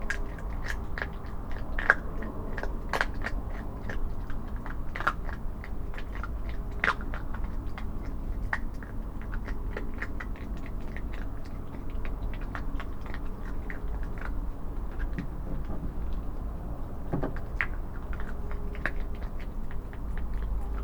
Pergola, Malvern, UK - Fox Owls Apple Jet
10.14pm on a breezy evening. A fox is munching bones I have put on the wooden deck for him. Owls call and an apple falls hitting the wheelbarrow. A jet flies over.
MixPre 6 II with 2 Sennheiser MKH 8020s
West Midlands, England, United Kingdom, 23 August 2021